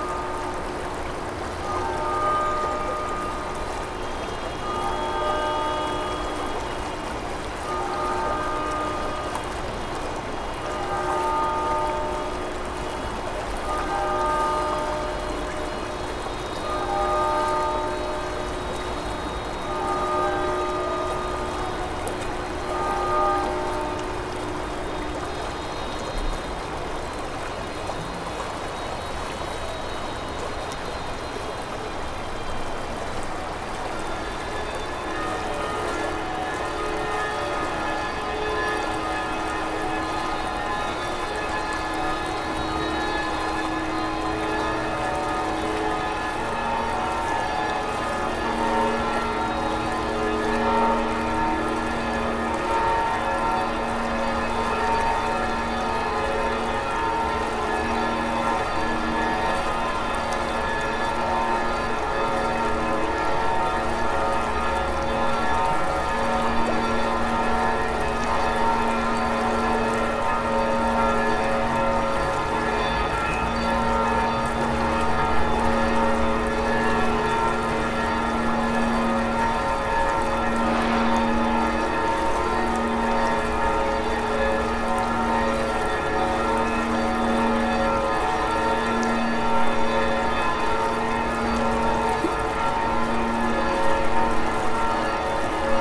The noon bells of St Bridget Church over the Radunia river gurgling.
Apart from the standard city traffic noise there is the noise of glass polishers used nearby at the then newly built Heweliusza 18 office building.
Tascam DR-100 mk3, built-in Uni mics.
województwo pomorskie, Polska, 27 November, 11:56